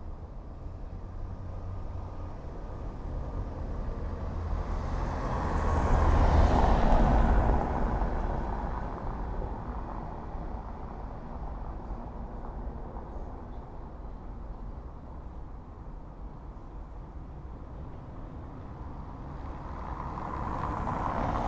On the corner of Eyre Place and Eyre Crescent, Edinburgh, Edinburgh, UK - Cobblestones and Clock
Walking around Edinburgh I noticed the partciular sound produced by vehicles driving over the cobblestones, and the noise of the rubber on the tyres. I stopped to record this sound, and towards the end of the recording, very nicely, a clock in a house on the corner struck 12. It is very faint, but I love that now I know - through listening - that the household on the corner has a beautiful clock that sometimes makes a duet with the traffic sounding on the cobblestones.